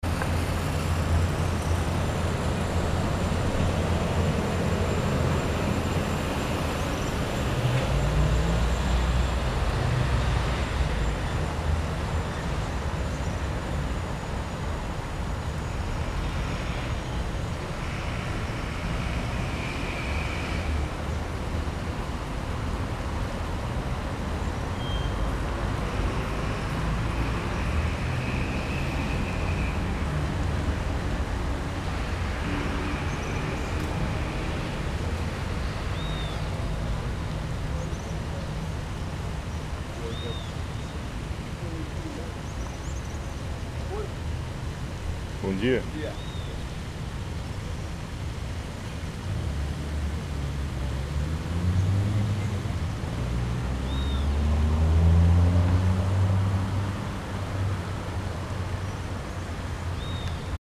Rua 15 de Novembro, Curitiba, Brazil
Sunday morning in the heart of downtown Curitiba, on the top of a building.